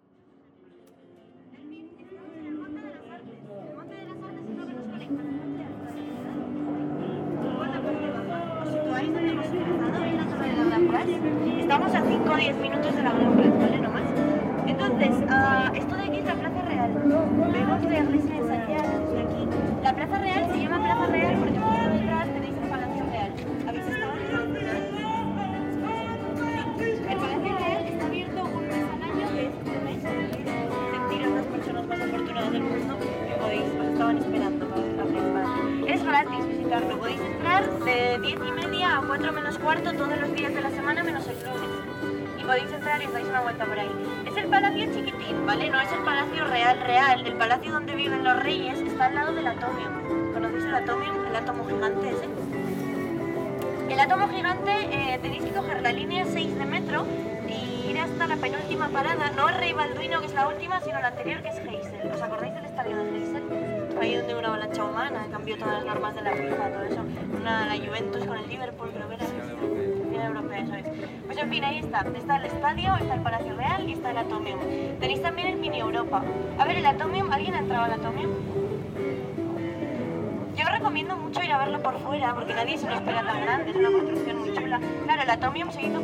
On the Mont des Arts, a big pedestrian square, tourist guide in spanish.
Bruxelles, Belgium, 25 August, 11:30